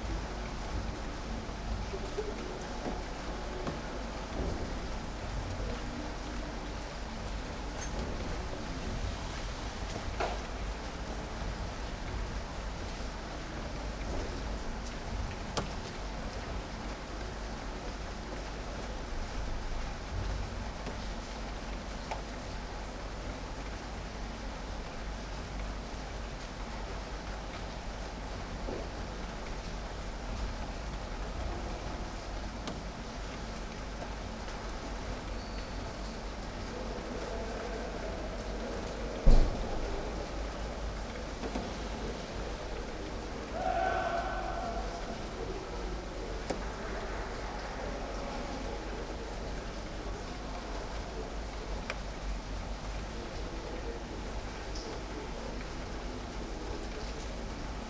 from/behind window, Mladinska, Maribor, Slovenia - light rain, pouring sounds of radio and nearby gym
25 April 2014, ~21:00